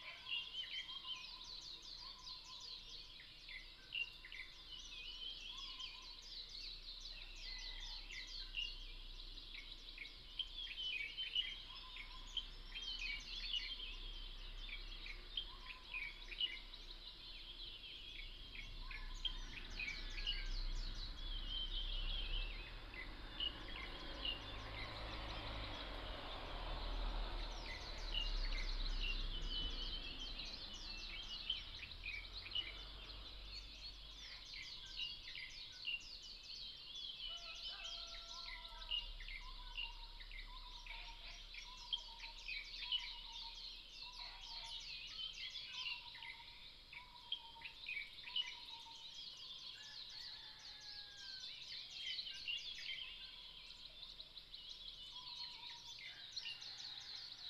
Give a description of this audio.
In the morning, Bird calls, Crowing sounds, at the Hostel